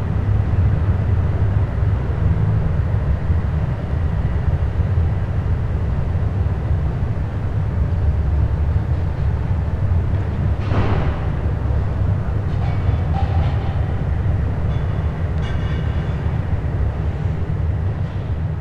Berlin, C/O Photogallery, courtyard - ambience of the courtyard
Berlin, Germany, 15 August, 12:12